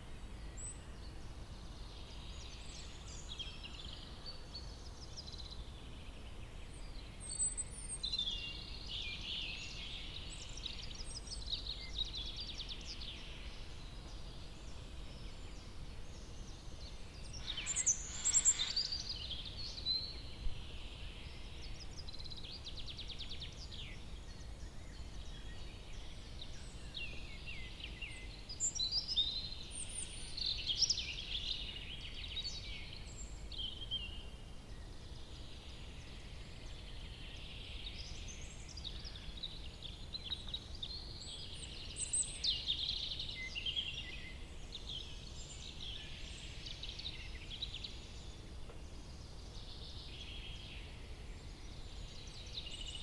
Unnamed Road, Forbach, Deutschland - Rote Lache - Black Forest, morning birds
Black Forest morning atmosphere, birds
Forbach, Germany, 24 April 2019